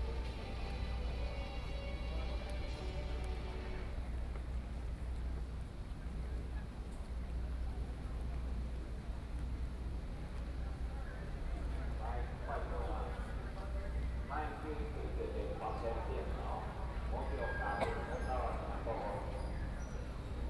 Jinan Road, Legislature - speech
Protest party, A young soldier deaths, Zoom H4n+ Soundman OKM II